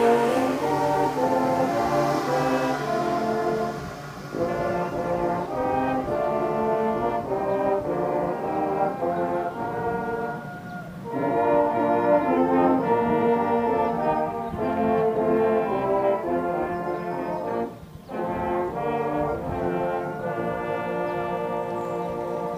propach, trad. brassband easter concert
easter morning, a local brassband on tour through the small villages around, playing some trad. tunes.
recorded apr 12th, 2009.